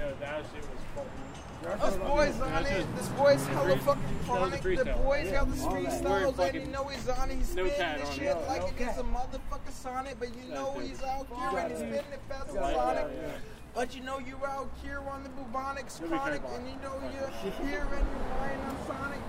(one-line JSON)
{"title": "B St, San Rafael, CA, USA - rap battle and conversation", "date": "2018-12-19 21:40:00", "description": "outside libation bottleshop in downtown san rafael. rap battle between local guys. some of them smoke weed. a few separate individuals talk about what it's like to work nearby.", "latitude": "37.97", "longitude": "-122.53", "altitude": "7", "timezone": "GMT+1"}